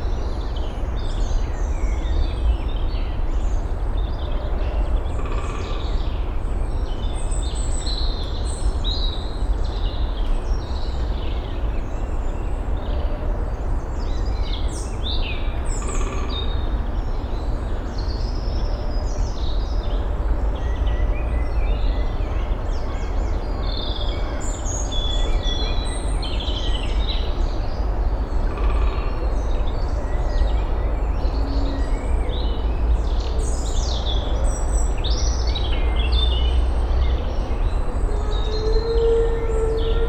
Keyenbempt - squirrel
mixpre3 + Lom Uzi's